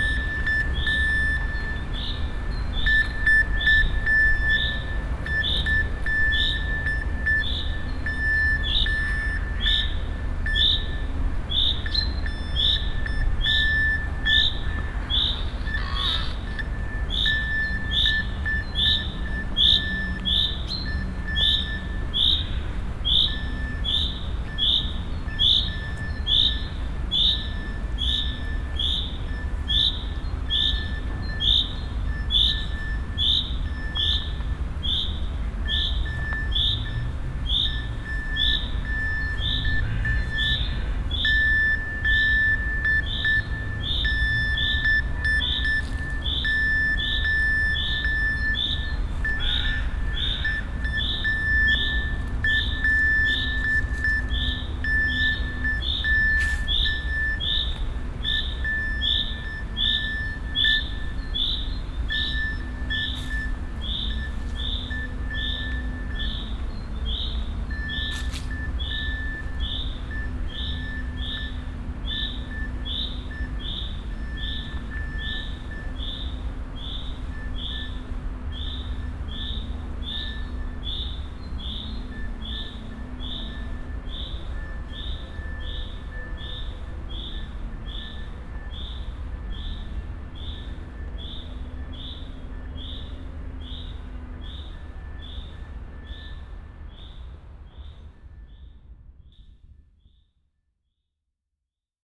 Cetatuia Park, Klausenburg, Rumänien - Cluj, Fortress Hill project, radio transmission, morse code
At the monument of Cetatuia. A recording of a soundwalk with three radio receivers of the project radio transmission on frequency FM 105. The sound of a morse code with the in five languages coded and repeated message: "the war is over"
Soundmap Fortress Hill//: Cetatuia - topographic field recordings, sound art installations and social ambiences
Cluj-Napoca, Romania, 29 May 2014, 16:10